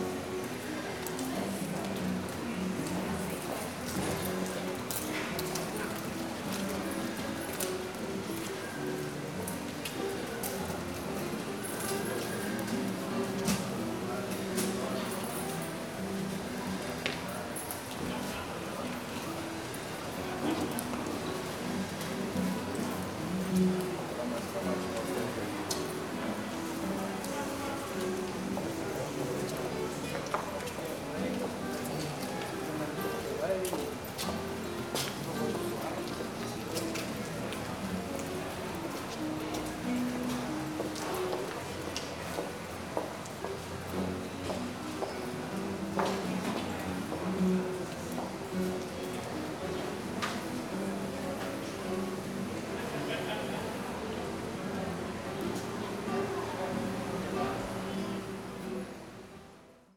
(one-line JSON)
{"title": "Porto, Rua de Cedofeita - guitar sketches", "date": "2013-10-01 13:16:00", "description": "young man sitting at one many cafes on the promenade, having his coffee and practicing guitar tunes.", "latitude": "41.15", "longitude": "-8.62", "altitude": "93", "timezone": "Europe/Lisbon"}